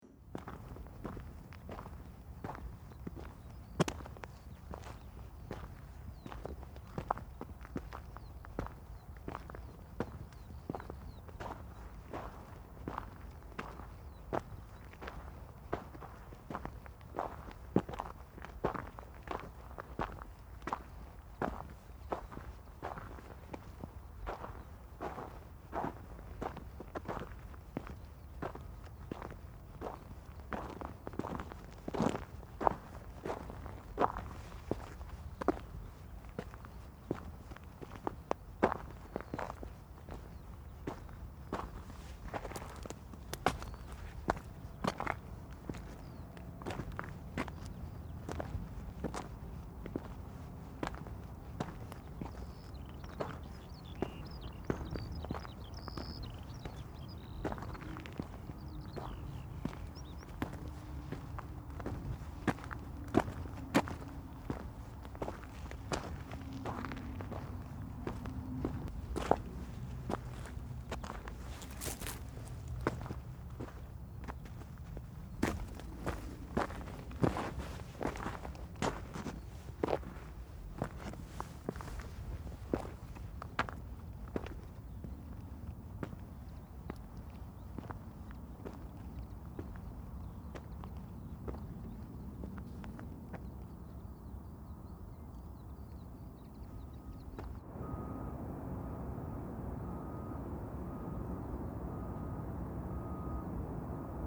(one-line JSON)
{"title": "Holz, Germany - disappeared - Walking over a dead village, Opencast atmosphere", "date": "2012-04-03 13:18:00", "description": "Despite the Google image this village no longer exists. All that remains are small mounds of yellow earth waiting to be eaten up as part of the huge Garzweiler opencast brown coal mine. These are my footsteps walking over the dead village as far as the current mine precipice followed by the sounds from below.", "latitude": "51.09", "longitude": "6.46", "altitude": "97", "timezone": "Europe/Berlin"}